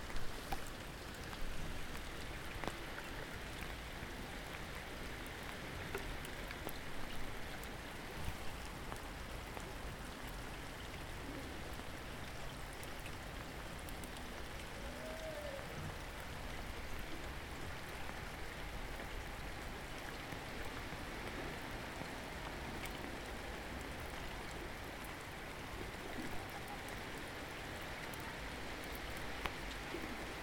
Quayside, Ouseburn, Newcastle upon Tyne, United Kingdom - Quayside, Ouseburn
Walking Festival of Sound
13 October 2019
Tandem cyclist and bobbing boats in the rain.
England, United Kingdom, 13 October, ~16:00